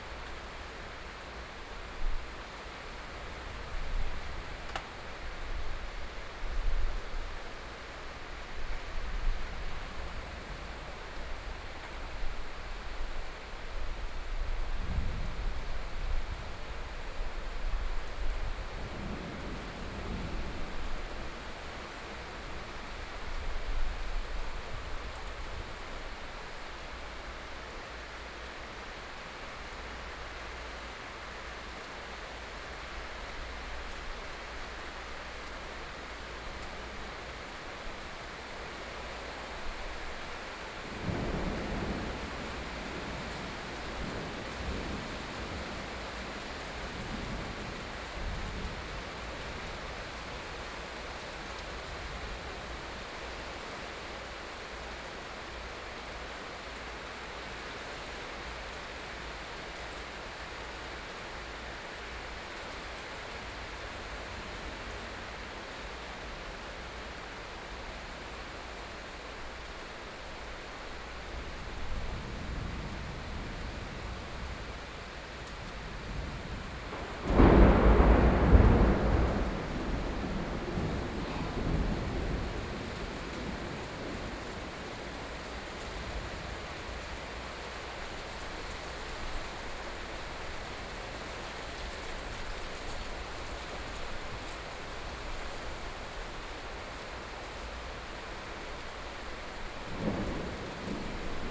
Upcoming thunderstorm, Zoom H4n+ Soundman OKM II +Rode NT4, Binaural recordings
Taipei City, Taiwan